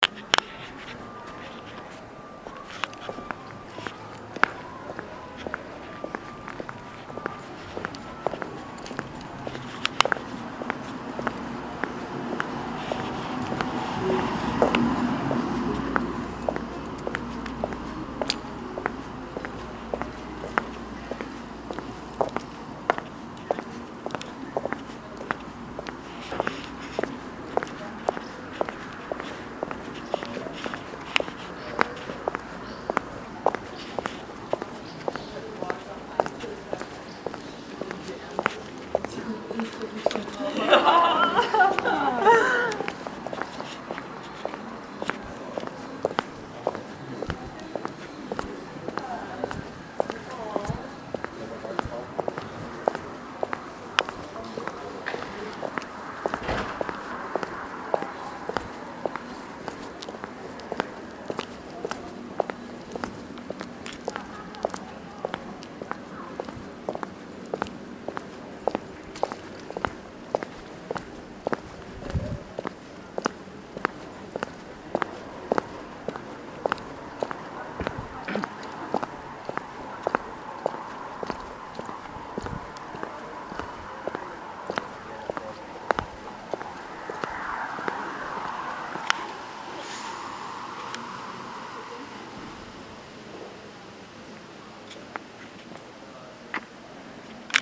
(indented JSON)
{
  "title": "Barfüßerstraße, Göttingen, Germany - Sound of footfalls",
  "date": "2020-08-30 15:15:00",
  "description": "Recorder: SONY IC recorder ICD-PX333\nfootfalls, laughter, wind blowing leaves, etc.",
  "latitude": "51.53",
  "longitude": "9.94",
  "altitude": "156",
  "timezone": "Europe/Berlin"
}